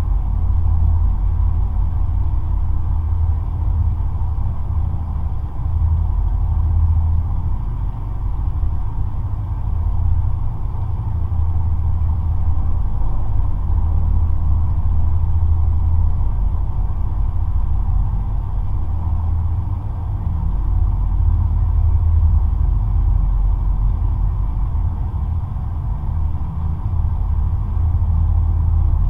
Utena, Lithuania, dam's construction
little half "hidden" river (main part is underground). small dam and some metallic details. geophone drone recording.